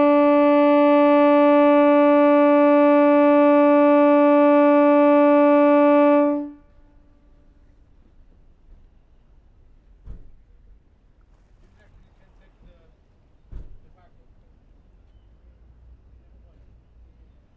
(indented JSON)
{
  "title": "Seahouses breakwater, UK - Foghorn ... Seahouses ...",
  "date": "2017-09-26 13:15:00",
  "description": "Foghorn ... Seahouses harbour ... air powered device ... attached to the only hexagonal light house in the country ... allegedly ..? pub quizzers please note ... open lavalier mics clipped to base ball cap ...",
  "latitude": "55.58",
  "longitude": "-1.65",
  "timezone": "Europe/London"
}